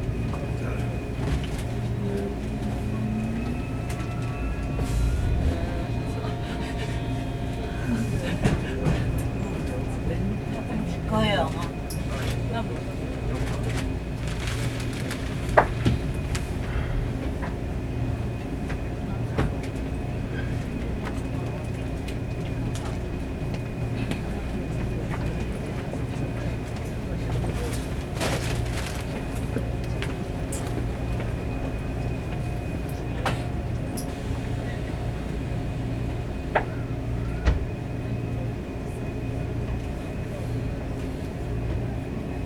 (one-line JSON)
{"title": "Changhua, Taiwan - On the train", "date": "2012-02-01 09:32:00", "latitude": "24.09", "longitude": "120.55", "altitude": "23", "timezone": "Asia/Taipei"}